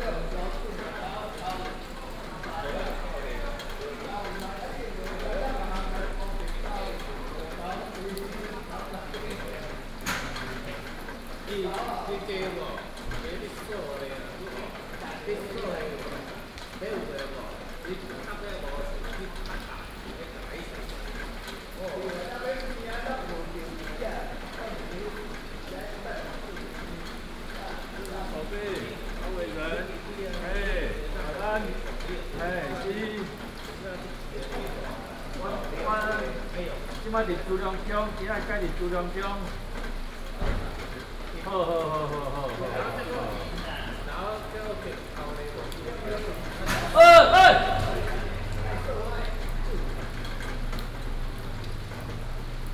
{"title": "珠龍宮善化堂, 埔里鎮珠格里 - In front of the temple", "date": "2016-03-24 19:27:00", "description": "In front of the temple, Rainy Day", "latitude": "23.94", "longitude": "120.96", "altitude": "469", "timezone": "Asia/Taipei"}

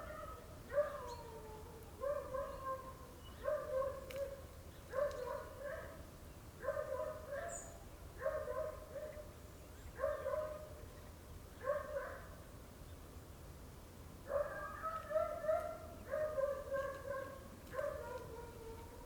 {"title": "S.G. Bosco Street, Pavia, Italy - barking dogs", "date": "2012-10-28 11:15:00", "description": "dogs barking in the country. a Car passes on the dirt road with puddles.", "latitude": "45.18", "longitude": "9.18", "altitude": "63", "timezone": "Europe/Rome"}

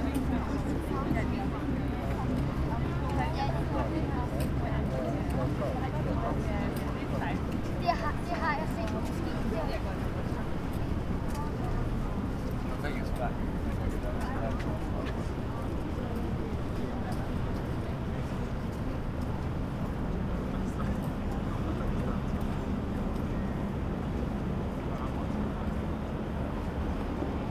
{"title": "Nyhavn, København, Denmark - Cannon shooting and sliding bridge", "date": "2017-04-16 11:05:00", "description": "Cannon shooting for Queen’s anniversary in the bakckground, at regular, slow rate. Tourists and locals pass by on new sliding bridge, by feet or bicycle. One can hear tourist boat at the end.\nTirs de canons pour l’anniversaire de la reine, à interval régulier. Toursites et locaux passent sur le pont, à pied et en vélo. On peut entendre un bateau de touriste sur la fin.", "latitude": "55.68", "longitude": "12.59", "timezone": "Europe/Copenhagen"}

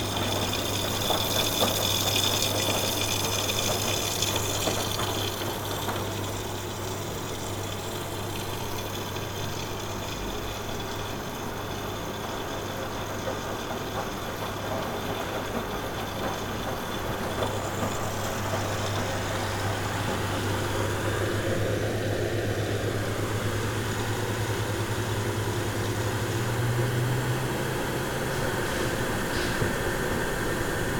{"title": "berlin, ohlauer str., waschsalon - sunday wash, sonic laundry", "date": "2011-12-04 12:00:00", "description": "laundy at sunday noon, the recorder turns a rather boring activity into a sonic experience.", "latitude": "52.49", "longitude": "13.43", "altitude": "40", "timezone": "Europe/Berlin"}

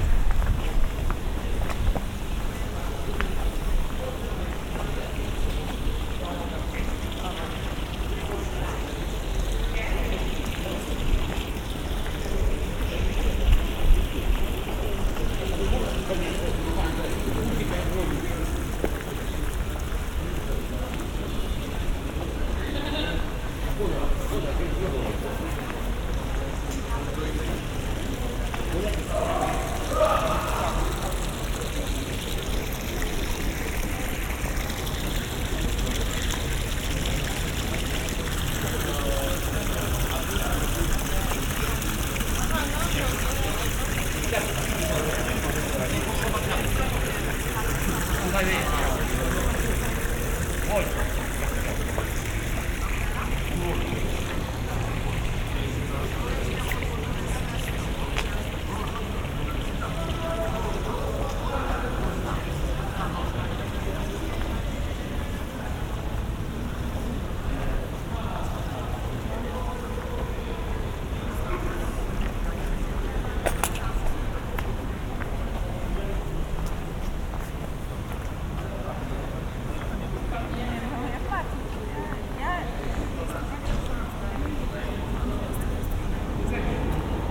{"title": "Market square, Grudziądz, Poland - (837b BI) Soundwalk on a market", "date": "2021-09-27 19:57:00", "description": "An evening soundwalk around a mostly empty market square.\nRecorded with Sennheiser Ambeo binaural headset on an Iphone.", "latitude": "53.49", "longitude": "18.75", "altitude": "37", "timezone": "Europe/Warsaw"}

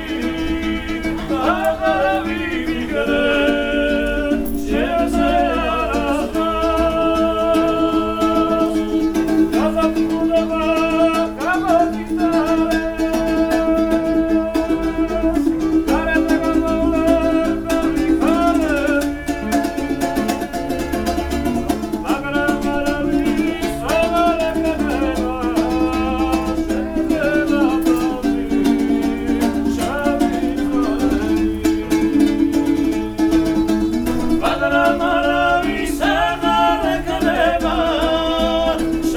{"title": "Aleksandr Pushkin / Nato Vachnadze / Rezo Tabukashvili Street, Aleksandr Pushkin St, Tbilisi, Georgia - Buskers in an underground passage in central Tbilisi.", "date": "2015-02-09 14:58:00", "description": "During a walk through Tbilisi, Georgia, we encounter a pair of young men singing for money in an underground passage.", "latitude": "41.70", "longitude": "44.80", "altitude": "411", "timezone": "GMT+1"}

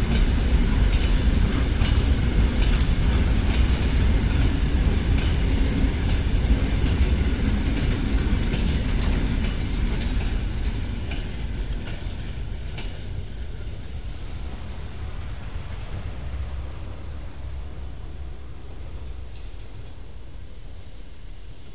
{"title": "Alsdorf - steam locomotive Anna 8 with coke train at Anna coke plant, Alsdorf (1992)", "latitude": "50.87", "longitude": "6.16", "altitude": "161", "timezone": "GMT+1"}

